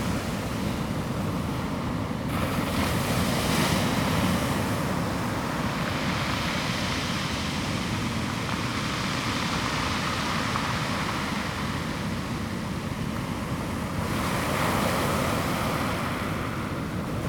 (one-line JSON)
{"title": "Praia de São Bartolomeu do Mar - Waves and pebbles", "date": "2022-04-12 10:37:00", "latitude": "41.57", "longitude": "-8.80", "altitude": "5", "timezone": "Europe/Lisbon"}